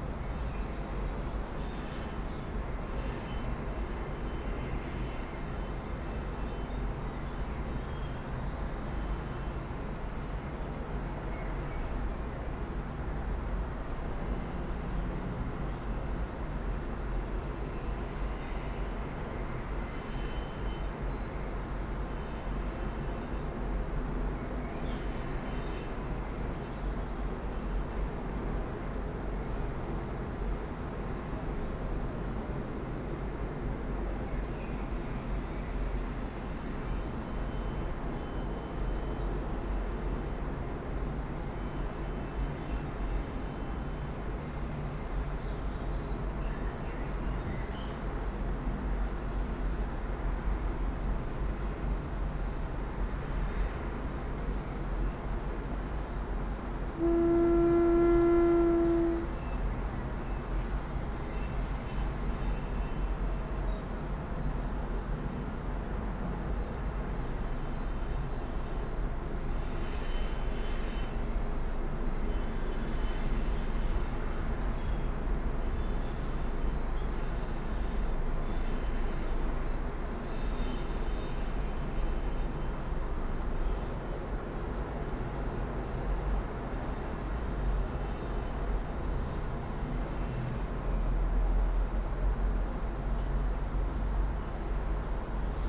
Poznan, Mateckiego street - window slit flute
recorder placed on a window sill. windows are closed but there is a small slit that lets in the outside sounds. Heavy traffic is already daunting at this time of a day. As well as unceasing landscape and gardening works in the neighborhood nearby. But the highlight of the recording is a sound that is similar to a wind instrument. It occurs only when the wind is strong and blows into western direction . You can also hear the cracks of my busted ankle. (sony d50)
Poznań, Poland, 13 April 2018